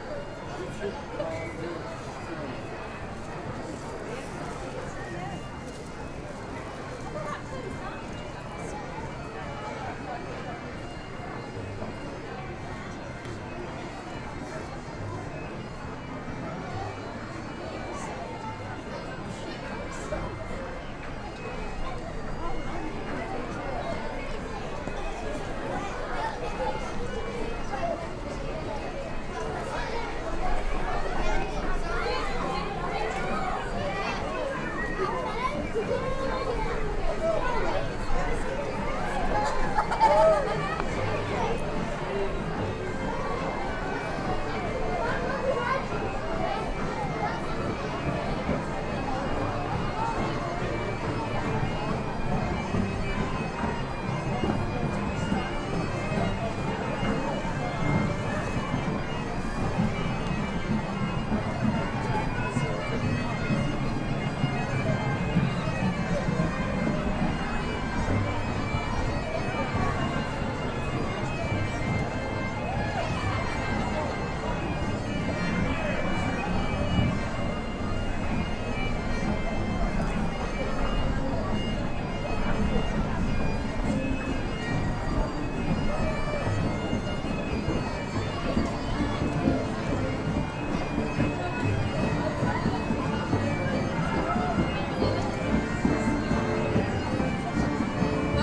High Cross, Truro Cathedral, Truro, Cornwall, UK - St. Piran's Day Celebration
Recorded on Falmouth University Field Trip with students from Stage 2 'Phonographies' module:
Soundfield SPS200 recorded to Tascam DR-680, stereo decode